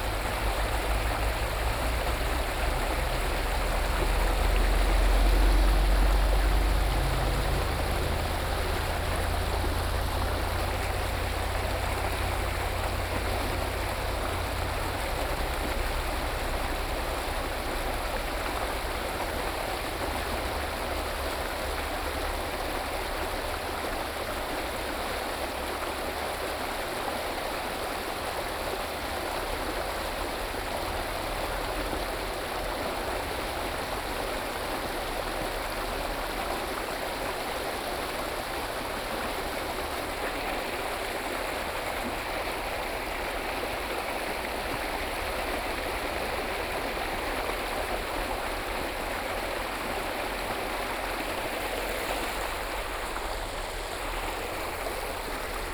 七腳川溪, Ji'an Township - Stream
Stream, Hot weather
Binaural recordings
Yongsheng Street, Hualien County, Taiwan